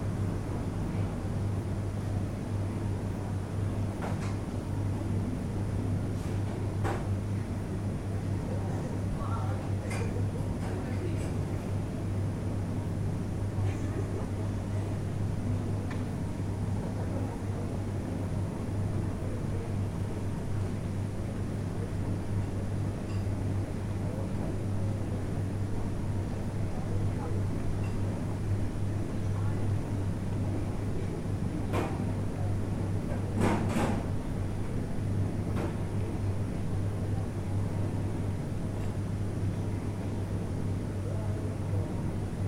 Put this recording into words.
one minute for this corner: Ribniška ulica 9